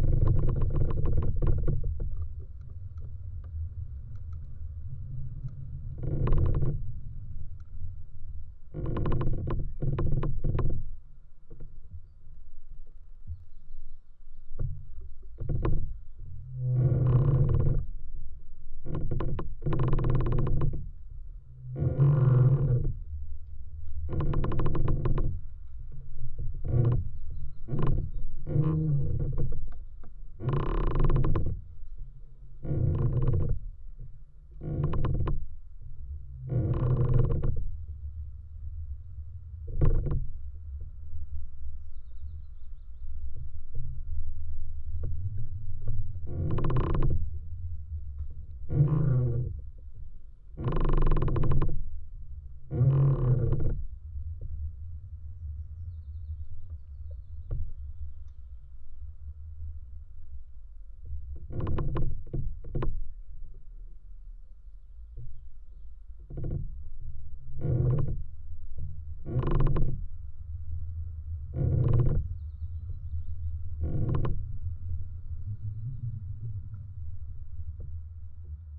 Antakalnis, Lithuania, creaking tree
inner processes in a creaking tree. contact mics and geophone for low end.